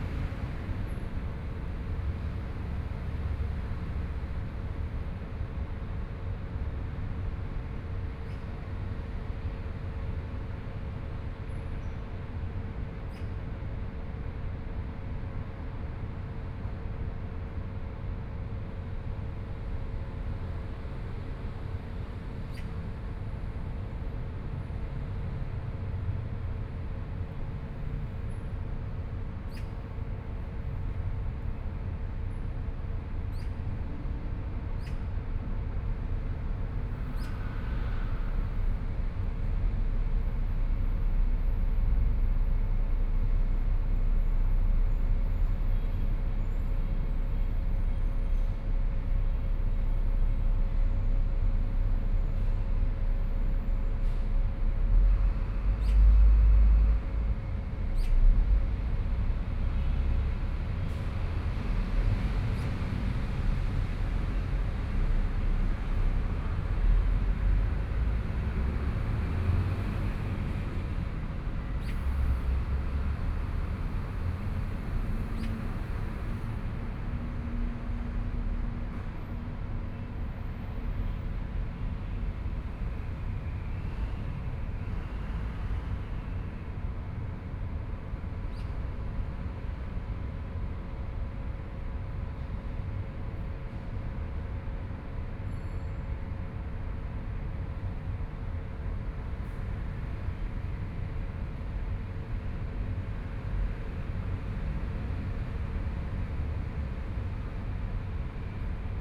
XinShou Park, Taipei City - in the Park
Environmental sounds, Traffic Sound, Birds
Zhongshan District, Taipei City, Taiwan, April 2014